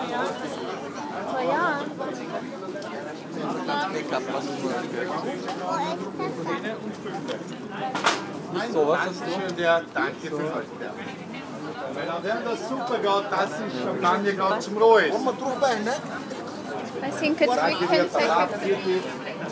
naschmarkt, vienna, austria - saturday, afternoon, walk